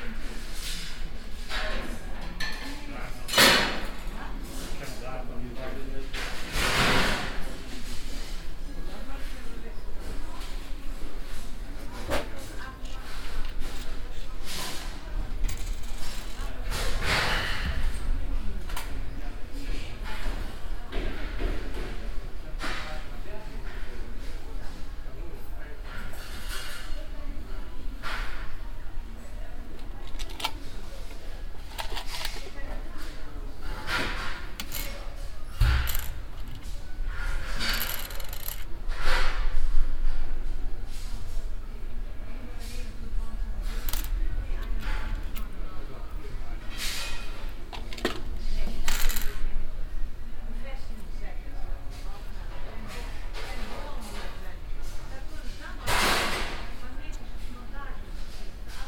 bensberg, overather straße, construction market, metal department
soundmap nrw: social ambiences/ listen to the people in & outdoor topographic field recordings